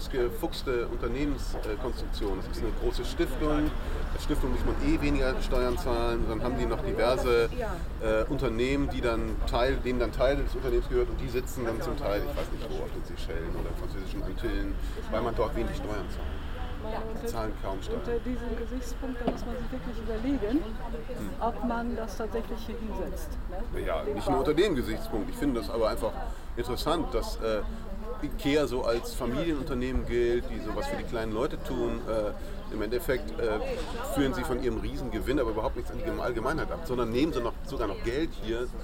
Kein Ikea in Altona. Altonaer Poststraße. 28.9.2009 - Offener Brief an Ingvar Kamprad, Unternehmensgründer IKEA wird abgeschickt